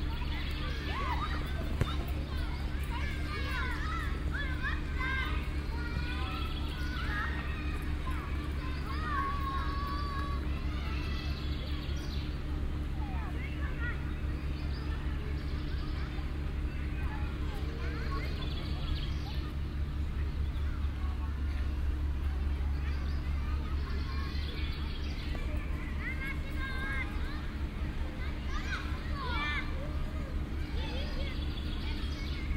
schulkinder der nahe gelegenen montessori schule beim fussballspiel - im hintergrund das schreddern von ästen des grünflächenamts
stereofeldaufnahmen im mai 08 - morgens
project: klang raum garten/ sound in public spaces - outdoor nearfield recordings
wiese, nord west, 8 May 2008, 20:43